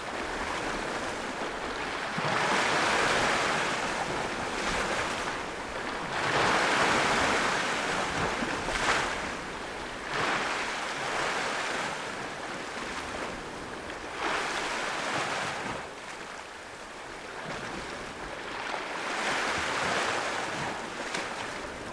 Karya Beach Camp, night time, sounds of waves by the rocks
Unnamed Road, Menteşe/Muğla, Turkey